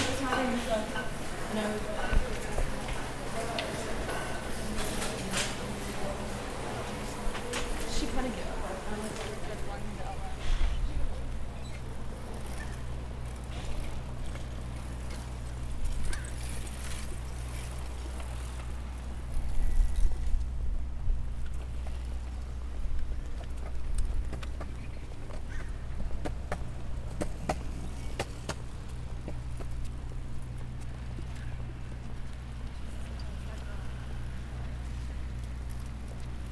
Pardall Rd, Goleta, CA, USA - Pardall Tunnel
Passing (walking) through Pardall tunnel and entering campus during the late afternoon on a Tuesday. First you can hear the sounds of bikes & people conversing throughout the tunnel. Once out of the tunnel, you can hear the sidewalk to the left of the bike paths, which consist of students walking or on skateboards. This is where the social life of IV transitions into the student life of UCSB.
Santa Barbara County, California, USA